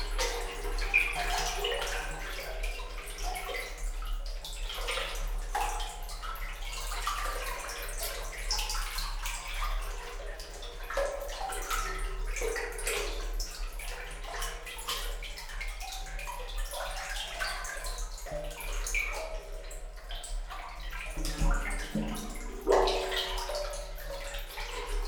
canal or underpass of Lietzengraben, a manhole in the middle, for regulating water levels. Water flows quickly and makes a musical sound within the concrete structure.
(Tascam DR-100 MKIII, DPA4060)